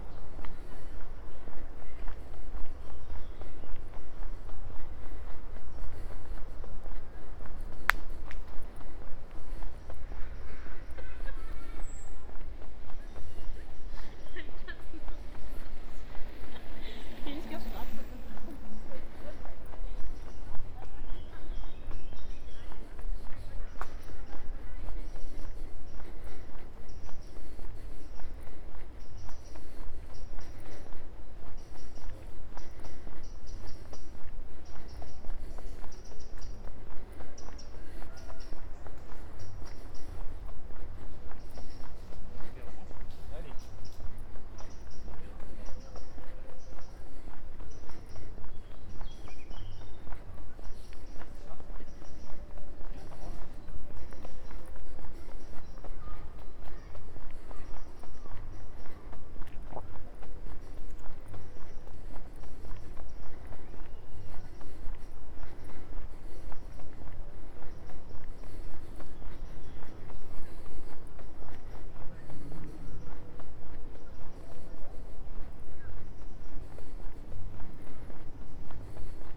Ziegelwiese Park, Halle (Saale), Germania - WLD2020, World Listening Day 2020, in Halle, double path synchronized recording:A
Halle_World_Listening_Day_200718
WLD2020, World Listening Day 2020, in Halle, double path synchronized recording
In Halle Ziegelwiese Park, Saturday, July 18, 2020, starting at 7:48 p.m., ending at 8:27 p.m., recording duration 39’18”
Halle two synchronized recordings, starting and arriving same places with two different paths.
This is file and path A: